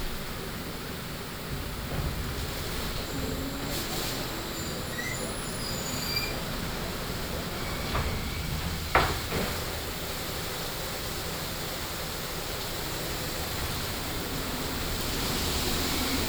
wasstraat tankstation
car washing street tankstation
10 September 2011, 15:42